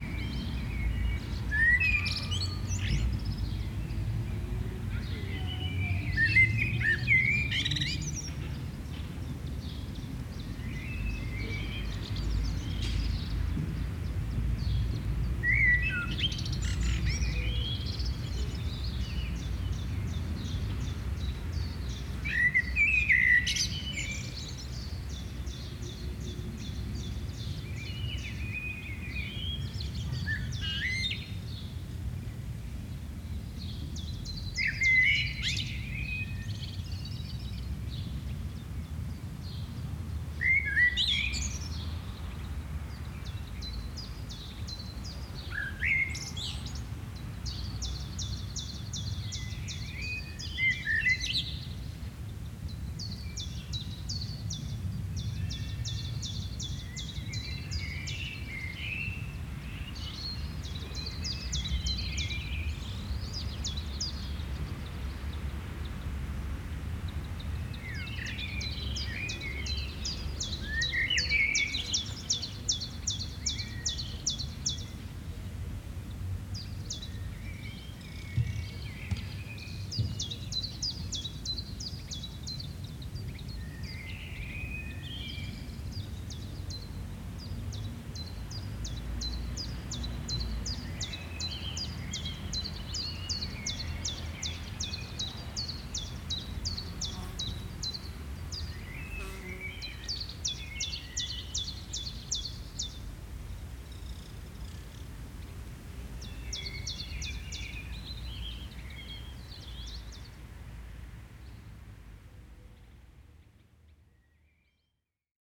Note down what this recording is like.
Beilngries, Pauliweg 1 - Garden ambience. There may be nothing exceptional about this recording. Anyway, recorded where I grew up thirty years ago, this is more or less the soundscape of my childhood. [Hi-MD-recorder Sony MZ-NH900, external microphone Beyerdynamic MCE 82]